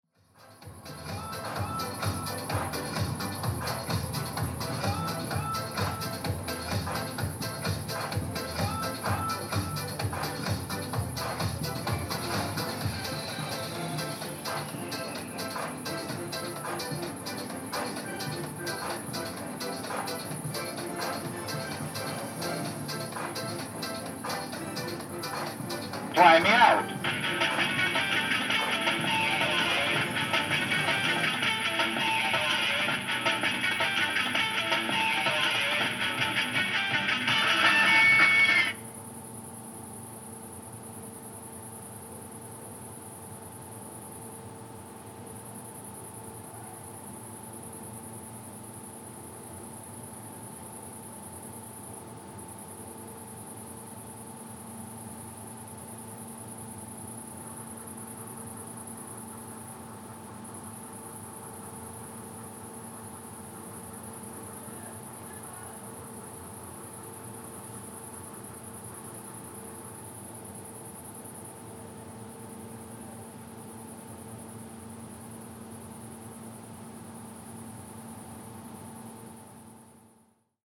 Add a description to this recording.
Recordist: Anita Černá, Description: Game machines near the main supermarket. Music, crickets, game machine sounds. Recorded with ZOOM H2N Handy Recorder.